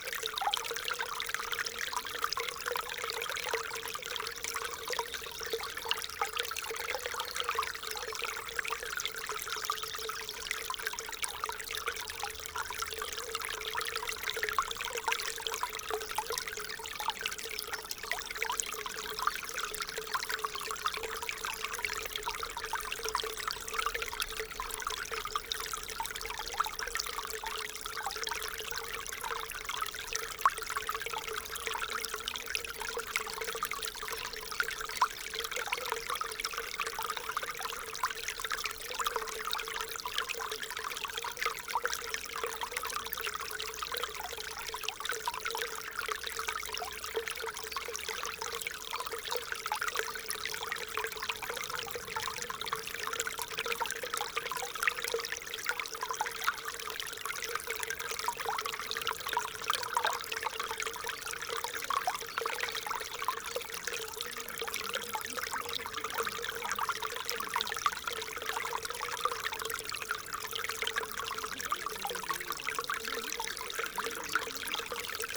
Villers-la-Ville, Belgique - Ry Pirot stream
The small Ry Pirot stream in the woods, and walkers.
Villers-la-Ville, Belgium, 11 April, 16:20